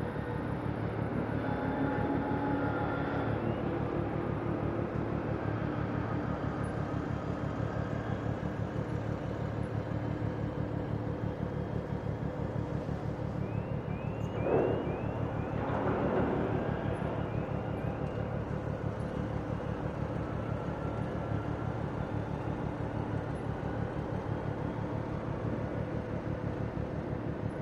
Antwerpen, België - port of antwerp
soundscape made with sounds of the port of antwerp
could be used to relax while listening
recordings where made between 1980 & 2015